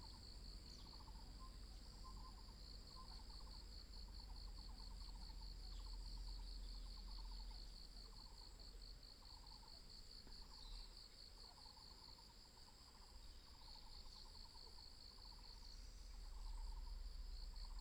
油茶園, 五城村 Yuchih Township - Birds called
Birds called, Insects sounds
Yuchi Township, 華龍巷43號, May 2016